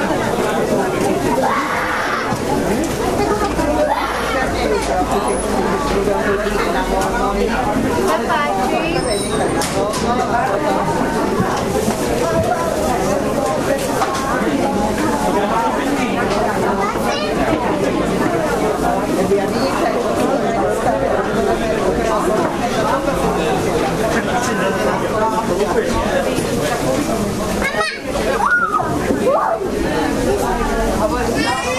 bratislava, market at zilinska street - market atmosphere IV